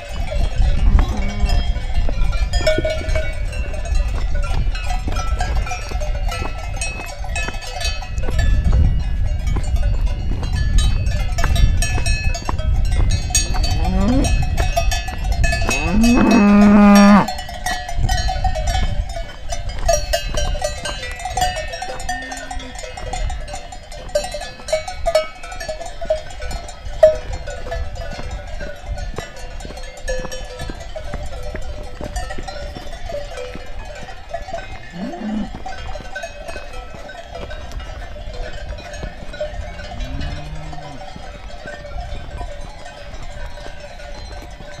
Pizol, Switzerland, Five Lakes Hiking Tour
Hiking in Switzerland, 2300 m altitude, trying to catch the last cable car which should take us back to the valley.... Five Lakes Hiking Route, Pizol, August 2009.